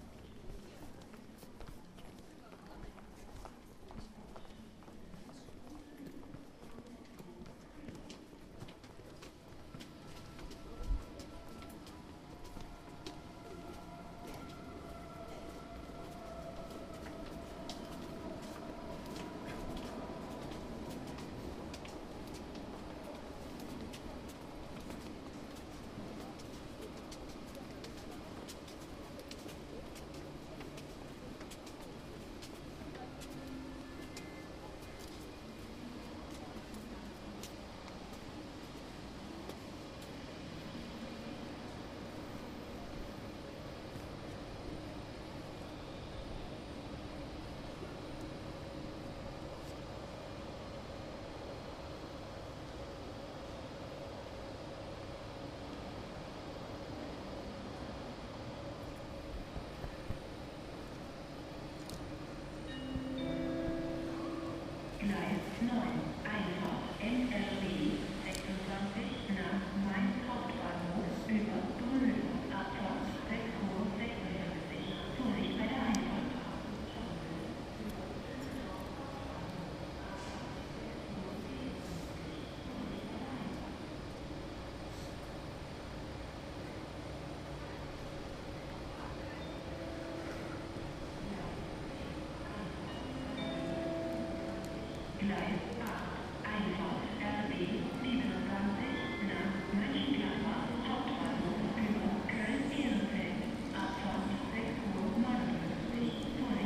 Riehl, Cologne, Germany - Riding to work
Riding with the tram. Every morning the same routine: the well known slight permutation of known faces, places, sounds: always the same and yet slightly different in arrangement and actual occurance (if that's a word). I walk the same way, take a variation of seats in the front of the tram, where every morning more or less the same faces sit: students, kids, office worker, craftsmen, tired, reading, copying homework. The sounds are familiar and yet always slightly different, unique in the moment.